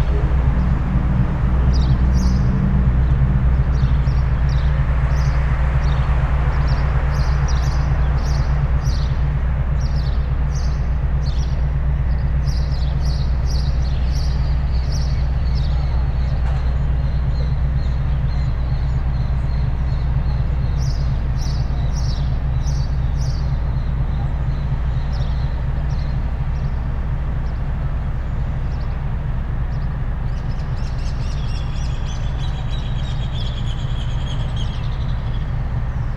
Gral. César Díaz, Montevideo, Departamento de Montevideo, Uruguay - powerplant drone
Montevideo diesel powerplant - Central Termoelectrica "José Batlle y Ordóñez" - with 4 generators with a total output of 343 MW. In this recording we hear 1! generator.
6 November 2021, 11:49